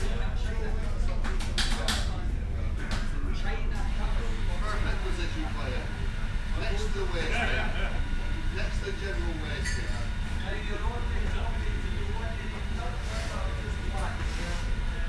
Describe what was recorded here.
Kennecraig to Port Ellen ferry to Islay ... the cafeteria ... lavaliers mics clipped to baseball cap ...